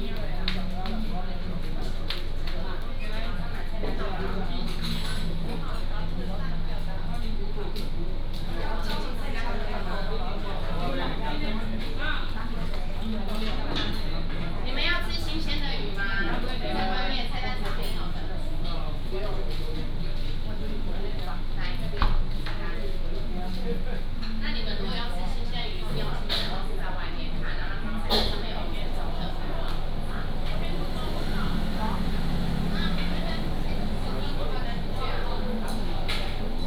南寮村, Lüdao Township - In the restaurant
In the restaurant